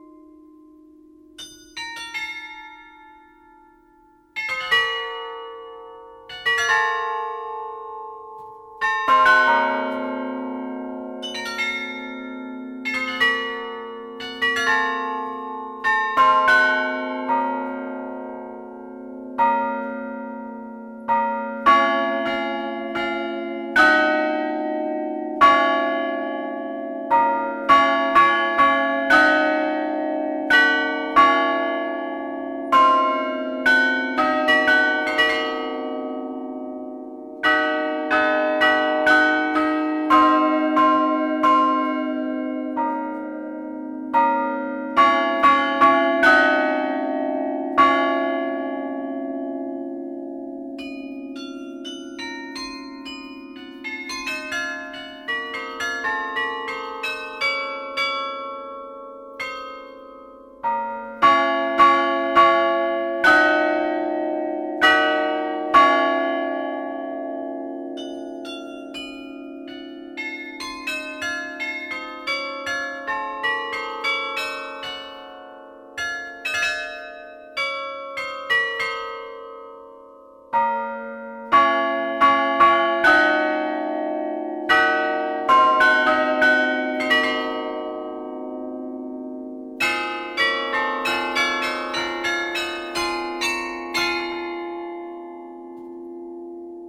Verviers, Belgique - Verviers carillon
Recording of the Verviers carillon, played by Fabrice Renard. This is a poor instrument, needing a deep restauration.
Verviers, Belgium, 30 October 2012